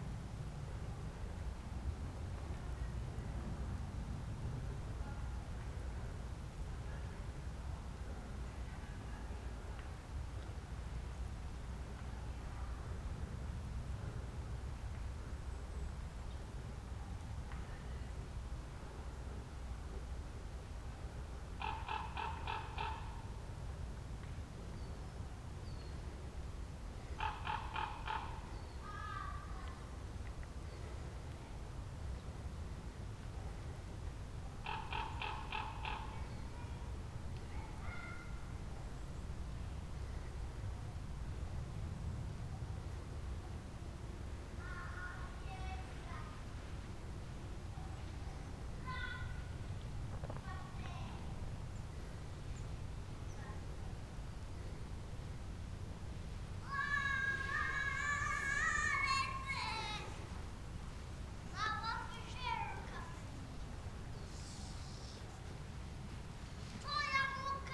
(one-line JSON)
{"title": "Morasko Nature Reserve - top of the hill", "date": "2017-01-15 12:28:00", "description": "recorded on the top of Moraska hill. It's the highest point in the Poznan area at 153m. Some winter ambience, family approaching and sledding down the hill. (dony d50)", "latitude": "52.48", "longitude": "16.89", "altitude": "156", "timezone": "Europe/Warsaw"}